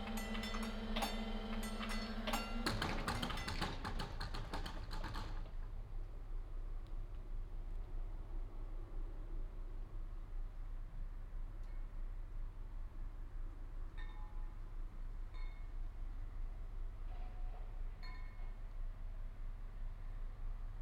Eckernförder Str., Kiel, Deutschland - Level crossing
Level crossing at night with a crossing train: warning bells and lowering gates, train passes by, gates open, sporadic traffic on the street, flag poles clattering in the wind, some collected rain drops falling on the leaves of a tree. Binaural recording, Zoom F4 recorder, Soundman OKM II Klassik microphone